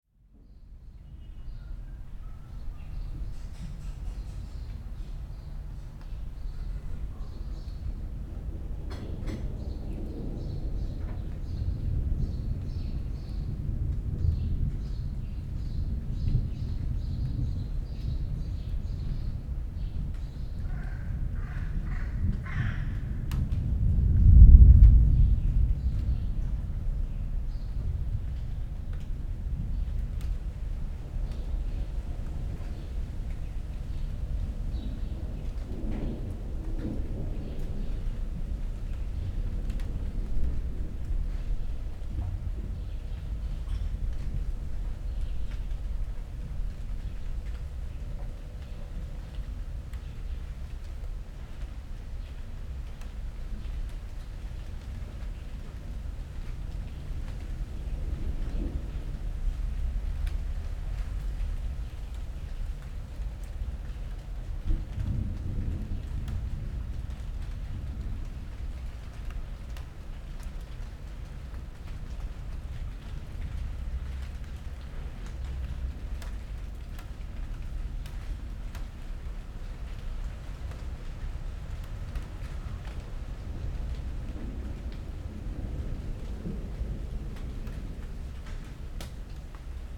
26.05.2009, 14:00 thunderstorm approaching, wind rising, first raindrops falling.
Berlin, Germany, 26 May